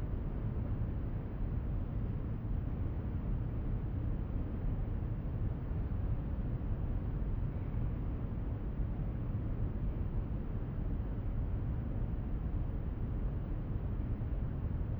Unterbilk, Düsseldorf, Deutschland - Düsseldorf, Landtag NRW, plenar hall
Inside the plenar hall of the Landtag NRW. The sound of the ventilations and outside ambience reflecting in the circular room architecture. Also to be haerd: door movements and steps inside the hall.
This recording is part of the exhibition project - sonic states
soundmap nrw - sonic states, social ambiences, art places and topographic field recordings
Düsseldorf, Germany, 23 November